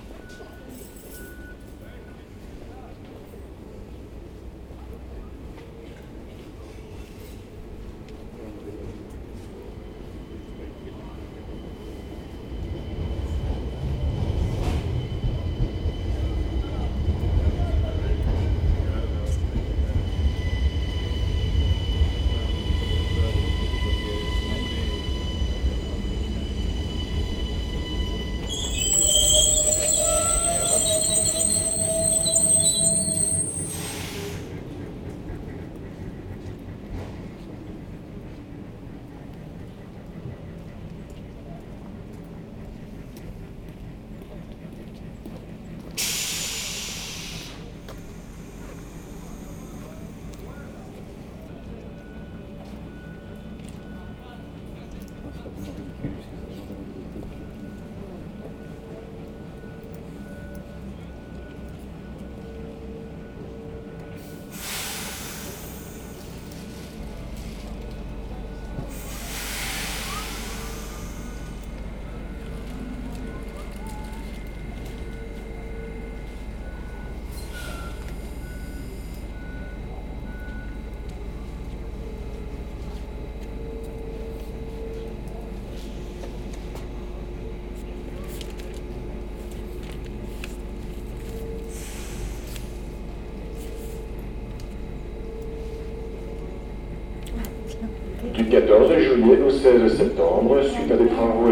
Ottignies-Louvain-la-Neuve, Belgium - Ottignies station
The Ottignies station on a saturday morning. Security guards discussing very quietly near the door, a woman lets the phone fall on the ground. Walking in the tunnel and on the platform. Train to Brussels arrives, I embark. Quiet discussions in the train, a person on the phone with a strong accent.